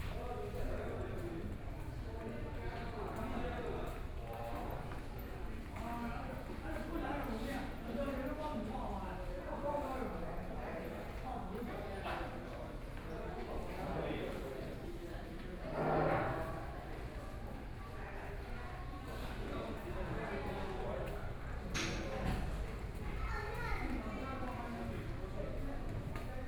Buddhist Temple, Luzhou, New Taipei City - walking in the Temple
Buddhist Temple, Walking in the temple each floor, Binaural recordings, Sony PCM D50 + Soundman OKM II